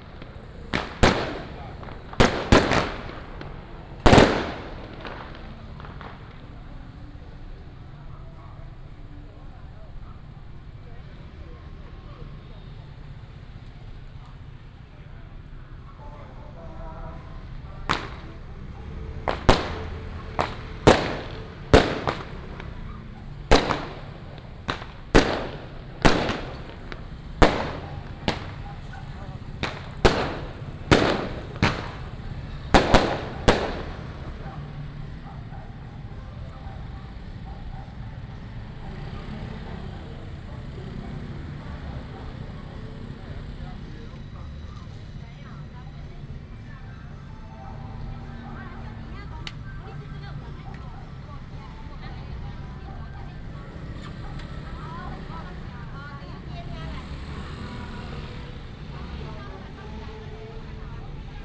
temple fair, Baishatun Matsu Pilgrimage Procession, Firecrackers and fireworks